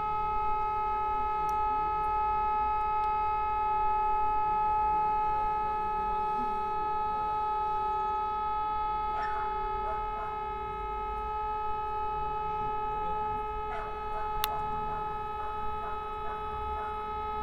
Na Nivách, Česká Lípa - Siren test
Regular testing of urban sirens with reporting
Severovýchod, Česká republika, August 2020